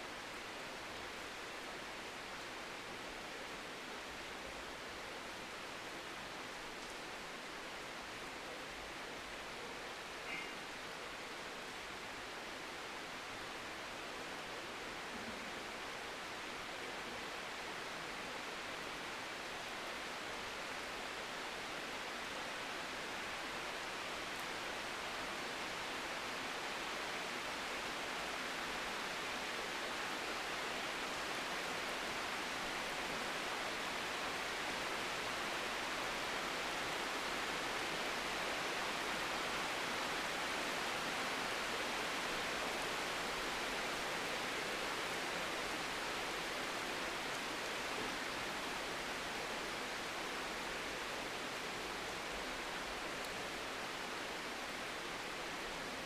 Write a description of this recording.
Rain falling on trees in an inner court yard